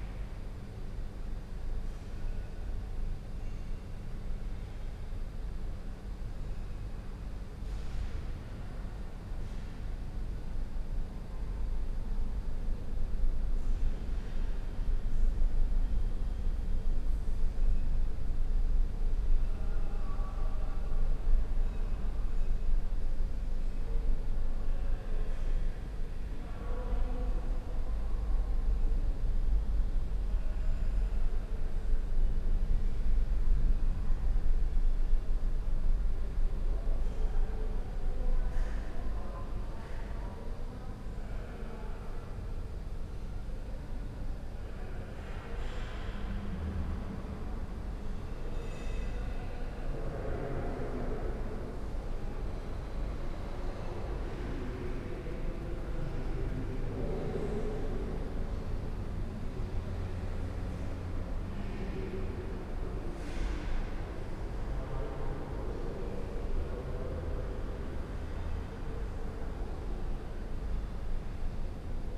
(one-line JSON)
{"title": "Praha, FAMU, stairway hall", "date": "2011-06-22 13:25:00", "description": "big strairway at FAMU film school, 3rd floor", "latitude": "50.08", "longitude": "14.41", "altitude": "198", "timezone": "Europe/Prague"}